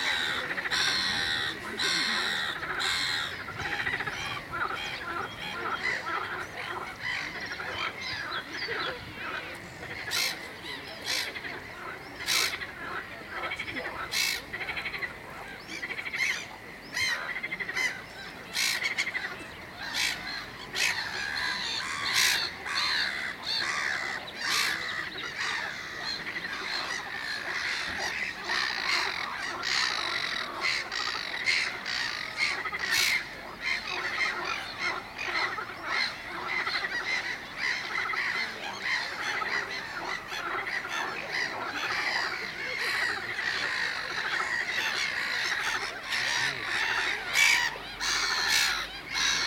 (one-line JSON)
{"title": "Суздаль, Владимирская обл., Россия - Black-headed gulls and frogs", "date": "2021-06-14 15:27:00", "description": "A quarrel of black-headed gulls (or something like that) among themselves and the croaking of frogs in a pond near the territory of the Suzdal Kremlin. Also, the voices of tourists passing by are heard on the recording.\nRecorded with Zoom H2n in 2ch surround mode", "latitude": "56.42", "longitude": "40.44", "altitude": "108", "timezone": "Europe/Moscow"}